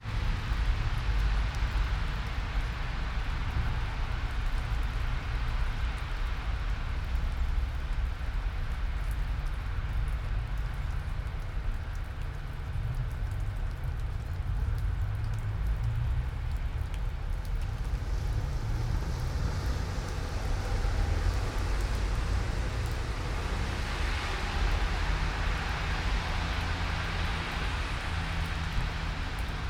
all the mornings of the ... - feb 6 2013 wed